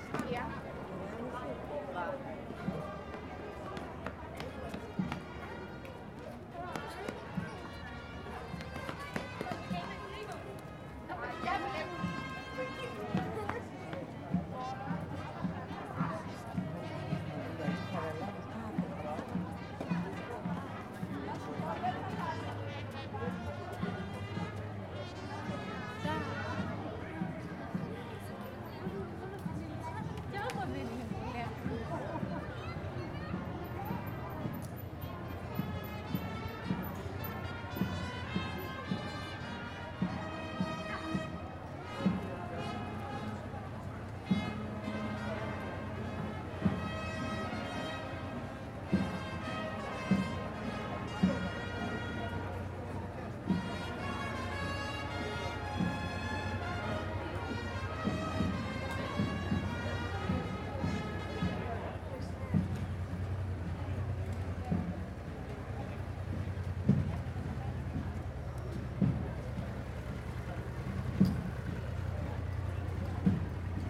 Anniversary of the Vision of Saint Pelagia.
People talking on the street while waiting for the litany of Saint Pelagia to approach. While it approaches and it goes by, we hear the band playing wind instruments and percussion and then we hear again people talking. Recorded with Zoom by the soundscape team of E.K.P.A. university for KINONO Tinos Art Gathering.